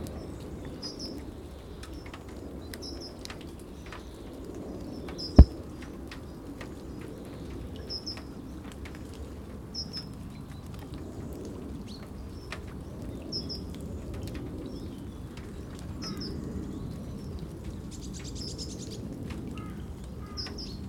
Ford, Laverstock, UK - 024 Birds and rain
Salisbury, UK